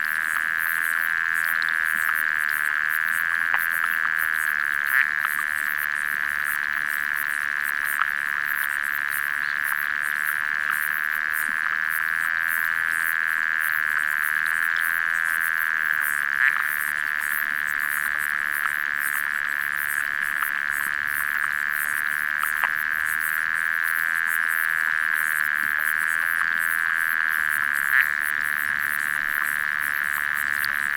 Rubikiai lake, Lithuania, underwater listening
Hydrophone recording in Rubikiai lake.
Anykščių rajono savivaldybė, Utenos apskritis, Lietuva